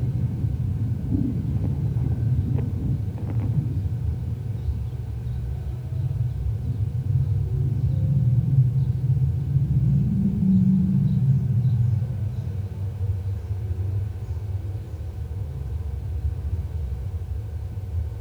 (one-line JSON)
{"title": "Waaw Centre for Art and Design, Saint Louis, Senegal - Contact Mics on Wire Fence", "date": "2013-04-17 14:00:00", "description": "Stereo contact mics attached to wire fence on rooftop of Waaw Centre for Art and Design. Contact mics by Jez Riley French, recorded on Zoom H4 recorder.", "latitude": "16.03", "longitude": "-16.50", "altitude": "6", "timezone": "Africa/Dakar"}